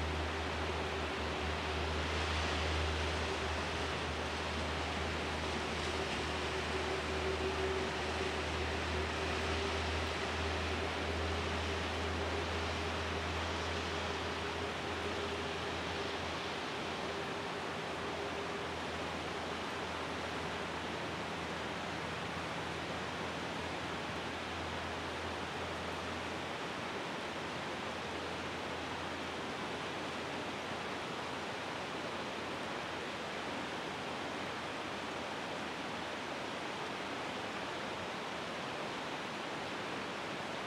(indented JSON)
{
  "title": "Entrevaux, Frankreich - Pigeonnier, Entrevaux, Alpes-de-Haute-Provence - Early morning ambience, sound of the river Var",
  "date": "2014-08-19 06:00:00",
  "description": "Pigeonnier, Entrevaux, Alpes-de-Haute-Provence - Early morning ambience, sound of the river Var.\n[Hi-MD-recorder Sony MZ-NH900, Beyerdynamic MCE 82]",
  "latitude": "43.95",
  "longitude": "6.82",
  "altitude": "505",
  "timezone": "Europe/Paris"
}